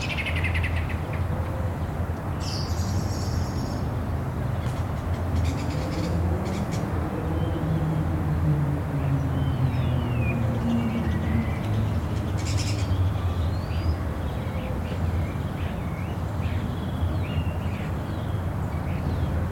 Rue Léon Jouhaux, Toulouse, France - Jolimont 01
ambience Parc
Captation ZOOM h4n
France métropolitaine, France, 10 April 2022